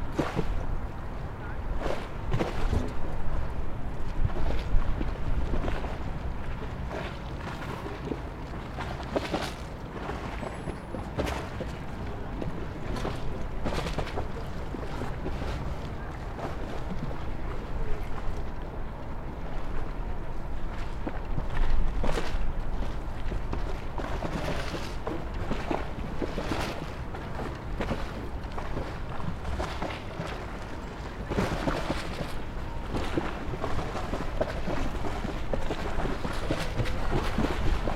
København K, København, Danemark - Bridge
Water near Inderhavnsbroen, Zoom H6